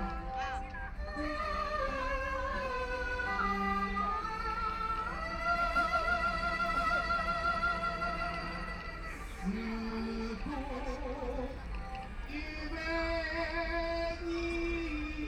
Heping Park, Shanhai - singing
Old people are singing traditional songs, Erhu, Binaural recording, Zoom H6+ Soundman OKM II
Hongkou, Shanghai, China